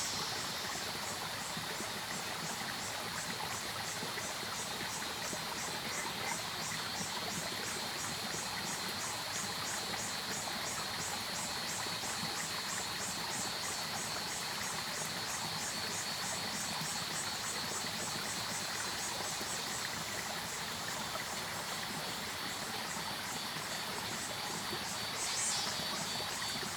頂草楠, 種瓜坑溪, Puli Township - The upper reaches of the river
Cicadas called, Stream sound, Frogs called, The upper reaches of the river, Bird sounds
Zoom H2n MS+XY